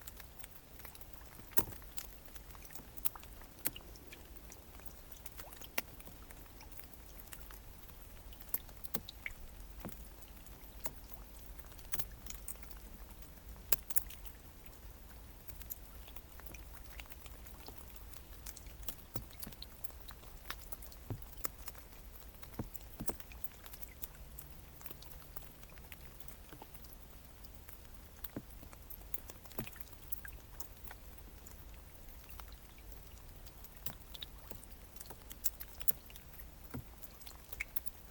Utena, Lithuania, raindrops on swamp
cold and rain at the local swamp. water drops are falling on partly melted ice. unfortunatelly, I had no better mic to record than this smallest Instamic recording device